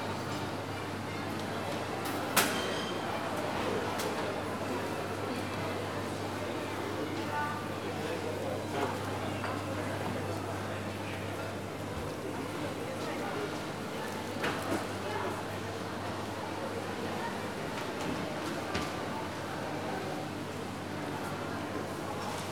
Berlin, Kreuzberg, Bergmannstraße - Marheineke-Halle
walking along various shops, stalls and imbisses at the Marheineke-Halle. distinct hum of ventilation units fills the main area of the hall. clutter of plates, shop assistants offering goods, warping purchased items, encouraging customers to take a look at their products.
Berlin, Germany, 31 August 2013